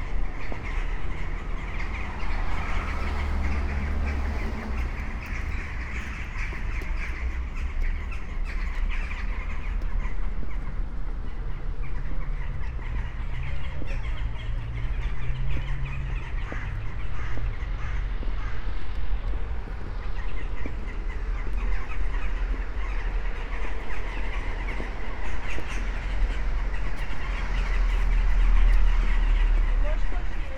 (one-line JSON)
{"title": "trees around national library, NUK, ljubljana - at dusk", "date": "2014-01-22 17:31:00", "description": "birds in tree crowns at the time of sun dispersing into electric lights, passers by, bicycles, buses, steps, instruments from behind windows, microphones wires ...", "latitude": "46.05", "longitude": "14.50", "timezone": "Europe/Ljubljana"}